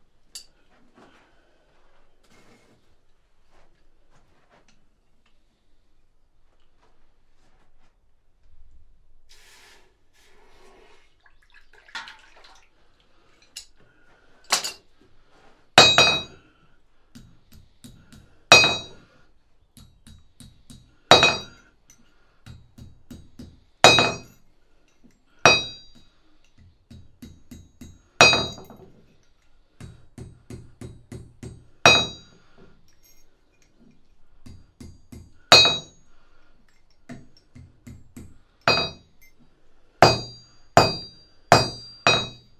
Wdzydzki Park Krajobrazowy, Kościerzyna, Polska - Wyrabianie podków
Dźwięk nagrany w Muzeum Kaszubskim Parku Etnograficznym w ramach projektu : "Dźwiękohistorie. Badania nad pamięcią dźwiękową Kaszubów".
14 June, 12:37